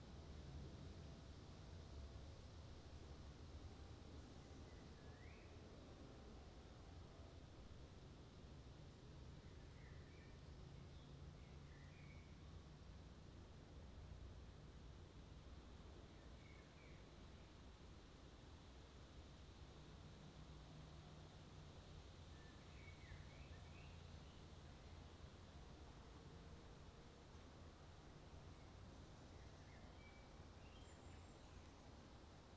Park Sorghvliet, Den Haag, Nederland - Park Sorghvliet (2/2)
Binaural recording in Park Sorghvliet, The Hague. A park with a wall around it. But city sounds still come trough.